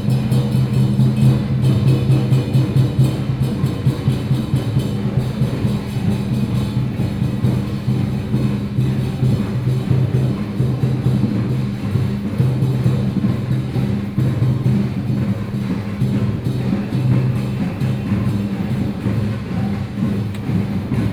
Daye Rd., Beitou Dist. - festival
Community Carnival festival, Eastern traditional temple percussion performances form, Western-style combat performance teams